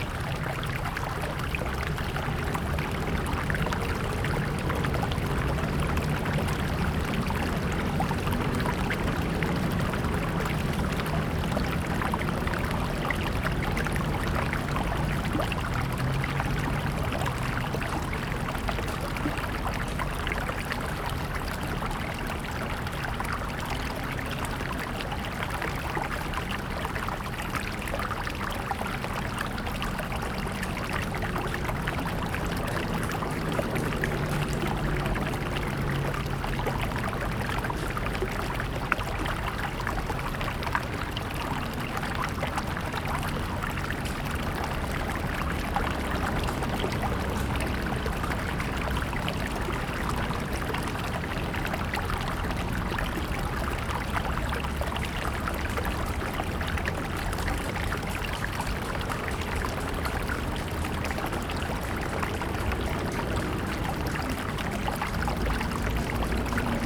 梅川, West Dist., Taichung City - Stream and Traffic Sound
Stream sound, Traffic Sound, Binaural recordings, Zoom H2n MS+XY